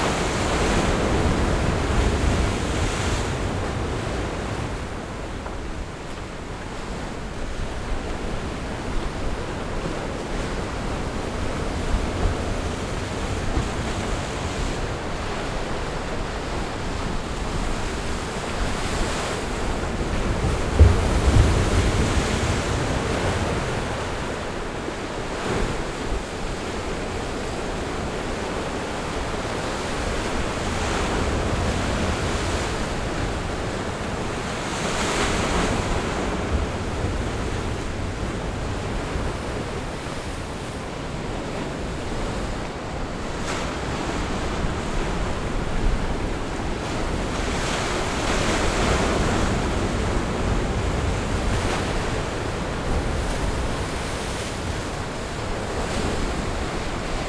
和平里, Chenggong Township - sound of the waves
In the wind Dibian, Sound of the waves, Very hot weather, Wind and waves are very strong
Zoom H6 MS+ Rode NT4